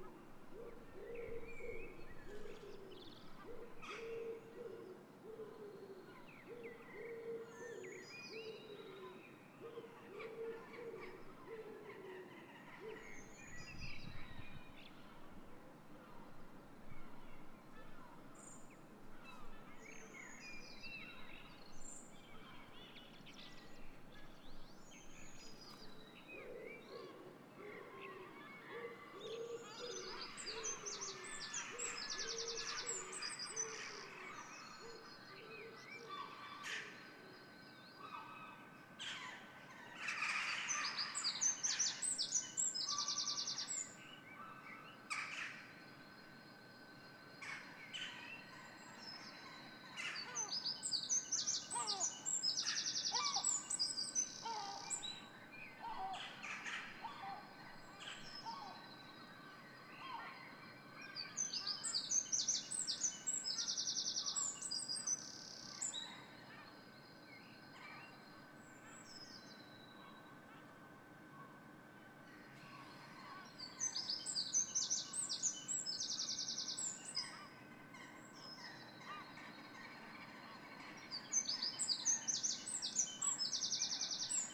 06:00 AM Dawn Chorus. With Jackdaws, Seagulls and a pigeon added to the usual bunch (Blackbird, Robin, Wren etc.).
Zoom H2 internal mics.